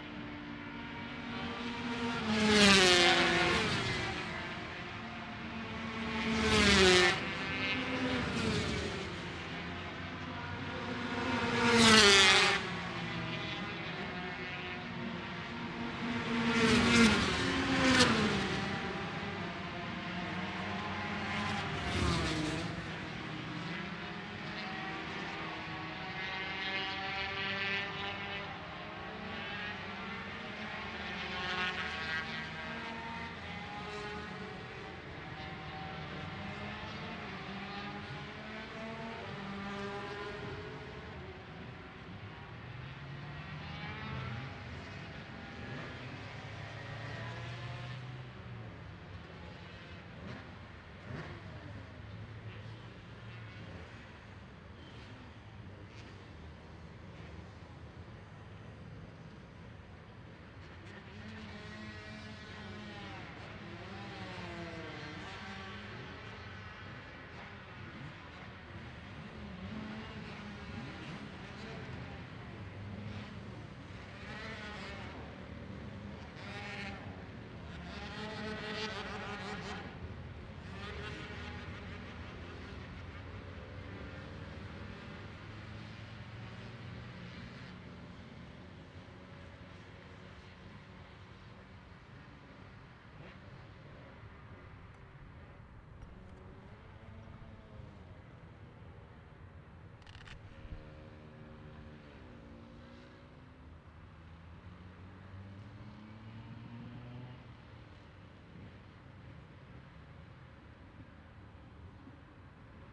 Scratchers Ln, West Kingsdown, Longfield, UK - British Superbikes 2005 ... 125 ...
British Superbikes 2005 ... 125 free practice one ... one point stereo mic to minidisk ...
26 March